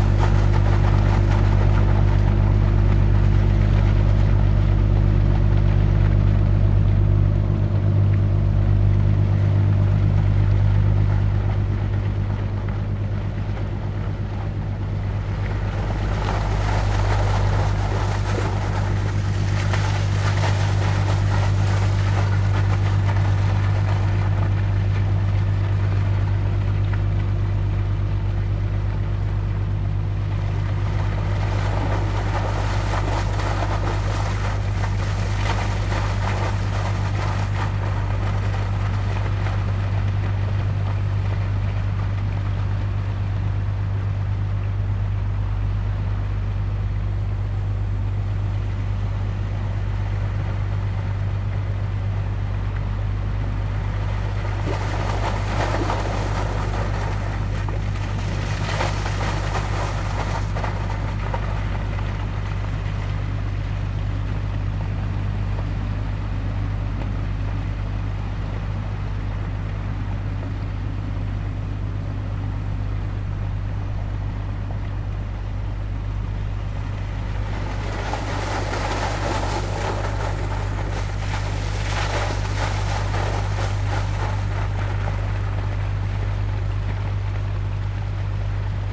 Sint-Annabos, Antwerpen, België - Rivierbank Sint-Anna
[Zoom H4n Pro] Water pipe discharging water into the Schelde, boat passing by.